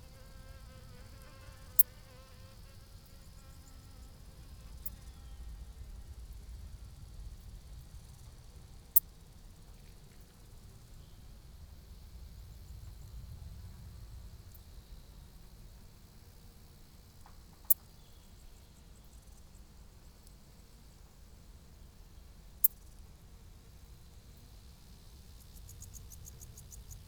Planina v Plazeh, Soča, Slovenia - In the bushes.
I placed the microphones in low bushes, 30 cm. Altitude 1548 m.
Lom Uši Pro, MixPreII